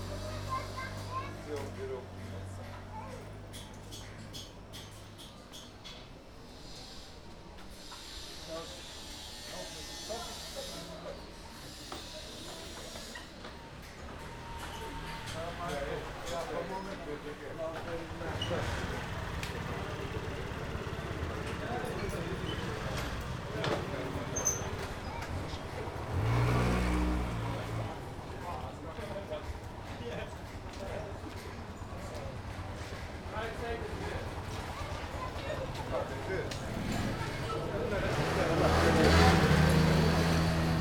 leaving the metalworkers' area, stand on a street corner in front of a drugstore and a boutique with stuff. Recorded with Sony PCM D-100 with built-in microphones.